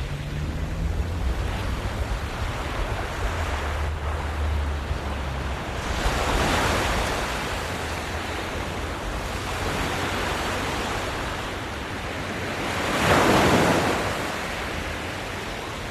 sounds of the seaside / pebble beach / waves lapping
Walking down on a pebble beach to waters edge. Sound of the waves lapping.